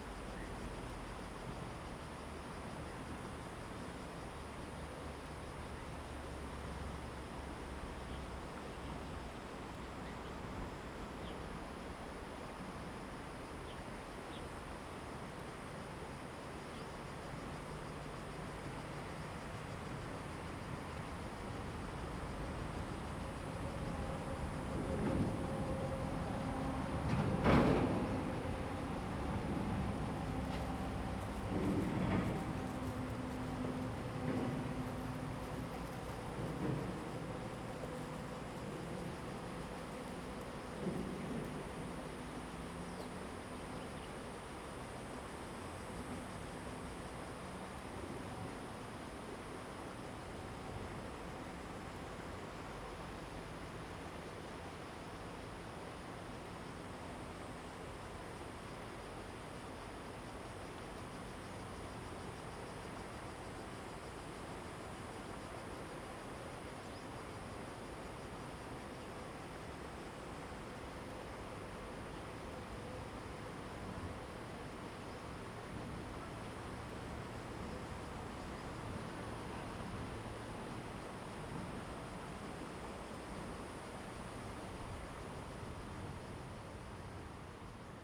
鹿野溪, Beinan Township - On the Riverbank
Birdsong, Traffic Sound, Stream, On the Riverbank
Zoom H2n MS +XY
Taitung County, Taiwan, 7 September, 08:18